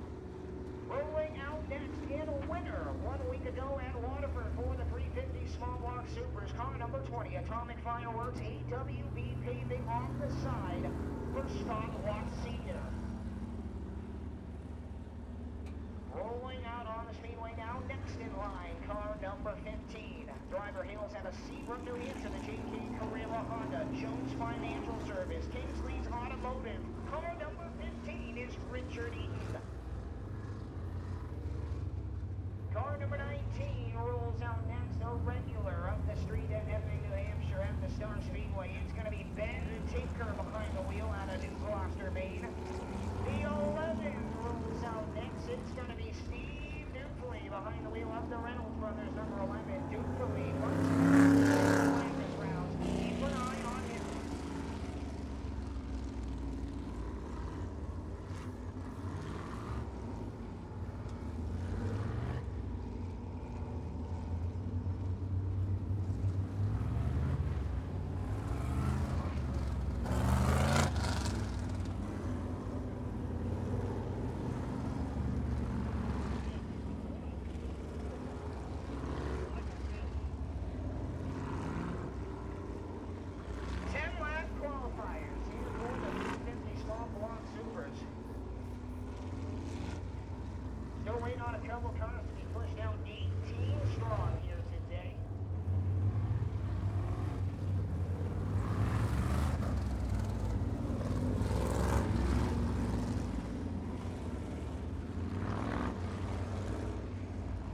Hudson Speedway - Supermodified Heat Races
Heat Races for the SMAC 350 Supermodifieds